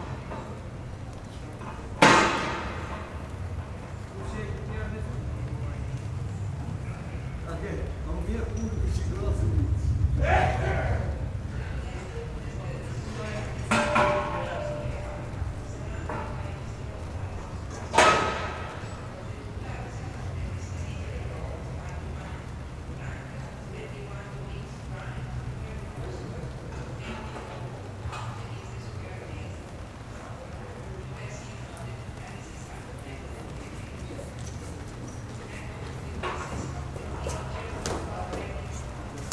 verona - piazza dei signori
piazza dei signori
22 October 2009